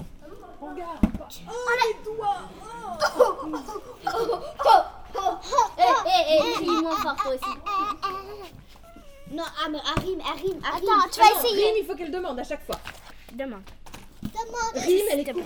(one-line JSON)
{"title": "Lucé, France - The child who didn't want to speak to the adults", "date": "2017-08-05 17:00:00", "description": "We are here into the entrance of the Lucé library. Children use this place as a playful spot. During the long summer holidays, there's nothing to do on the surroundings, especially in Chartres city, despising deeply the poor people living here. It's very different in Lucé as the city is profoundly heedful of this community. In fact, it means the library forms a small paradise for aimless children. These children are accustomed and come every day.\nOn this saturday evening, the library will close in a few time. Chidren play, joke, and discuss with the employees. Four children siblings are especially active and noisy. Rim (it's her first name) is a small child, I give her four years, nothing more. She doesn't want to talk to the adults. When she wants something, she asks her sisters to speak to the adult. As an education, adults refuse to answer her and kindly force her to speak to them. It's difficult for her and she's crying every time.\nIt's a completely improvised recording.", "latitude": "48.44", "longitude": "1.47", "altitude": "157", "timezone": "Europe/Paris"}